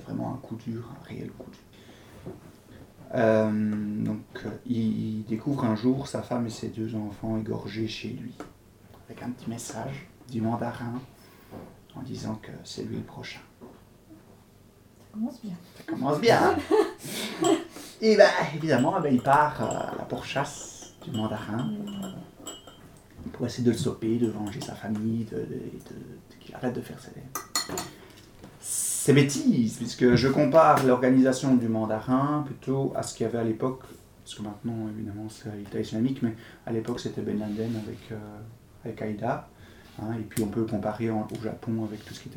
Fragment of an interview of Claude Barre, who write books. He explains why he writes detective stories.